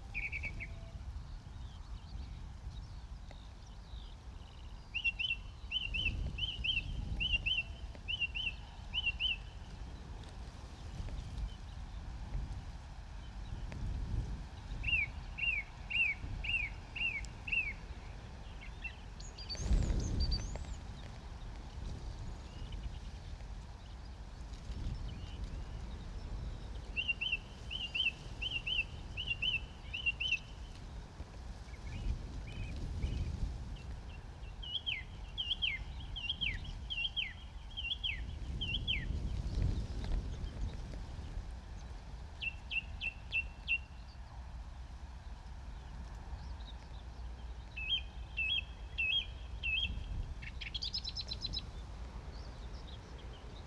Streaming from a hedgerow in large intensively farmed fields near Halesworth, UK - Morning song thrush very close, gusty wind, busy skylarks